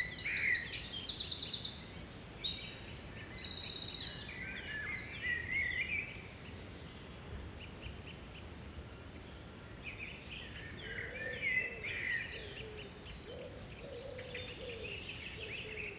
Fahrenwalde, Deutschland - Broellin - Birds in the garden, including a cuckoo
[Hi-MD-recorder Sony MZ-NH900, Beyerdynamic MCE 82]
Fahrenwalde, Germany, 8 June 2015